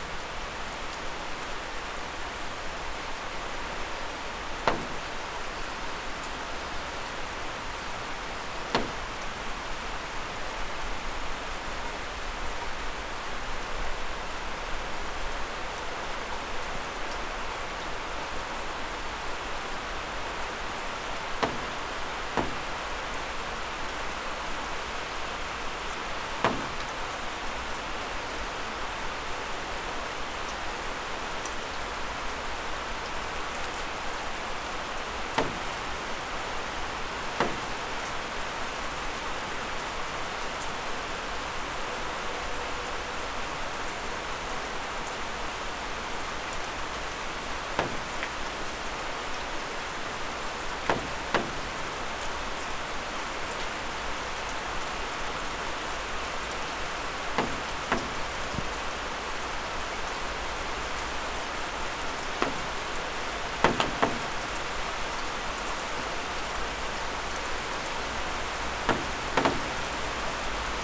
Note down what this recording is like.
A soundscape of my neighborhood during rain. Recorded from a balcony using ZOOM H5.